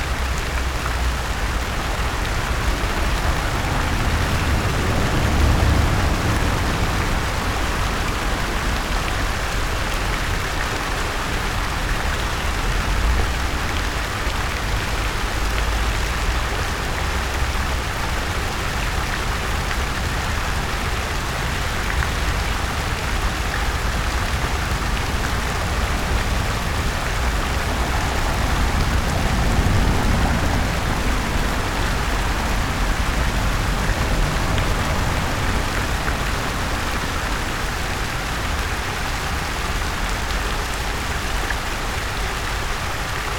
Brussels, Quai à la Houille, the fountain